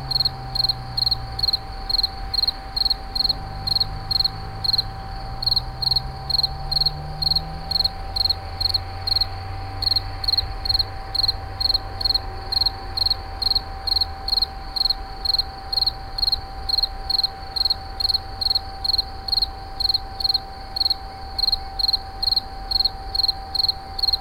France, Auvergne, Insect, WWTP, Night, Binaural